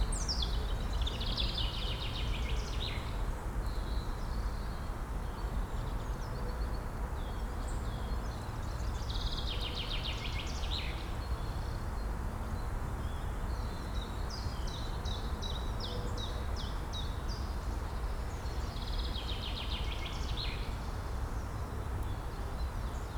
Am Sandhaus, Berlin-Buch, Deutschland - forest ambience /w drone
forest ambience, drone appears, near former GDR/DDR government hospital
(Sony PCM D50, DPA4060)